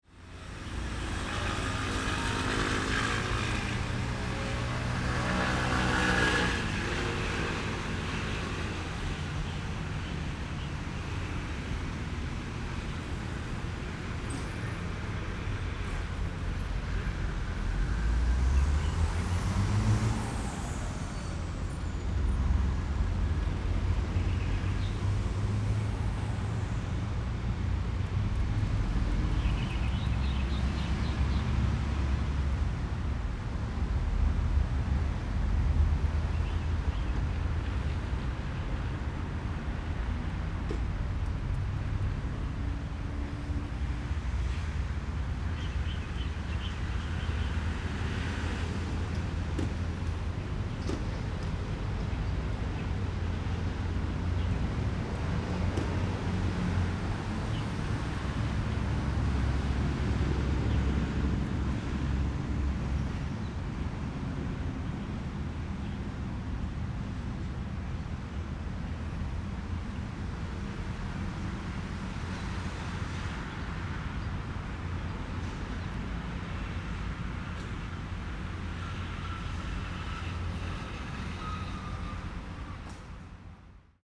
Zuoying, Kaohsiung - Environmental Noise
In the park, Rode NT4+Zoom H4n
左營區 (Zuoying), 高雄市 (Kaohsiung City), 中華民國, 3 March 2012